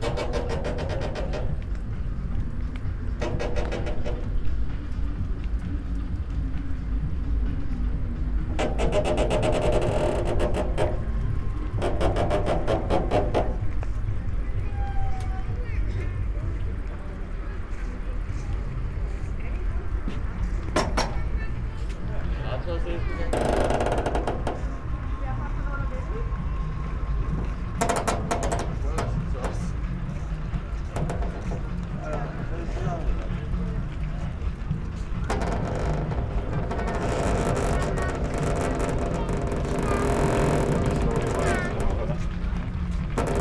marina, Novigrad, Croatia - drawbridge, squeaks, at night
night ambience at the marina